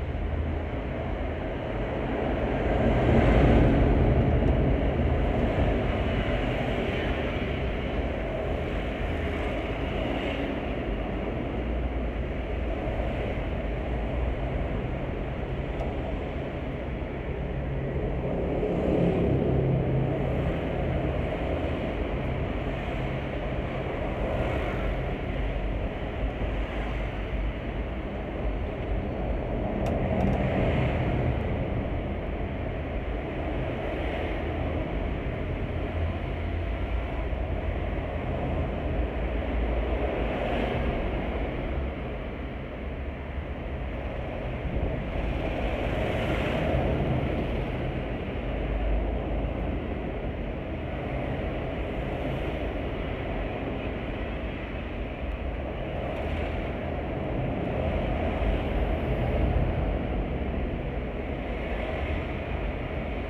Guandu Bridge, New Taipei City - Bridge
The Bridge
Zoom H4n+Contact Mic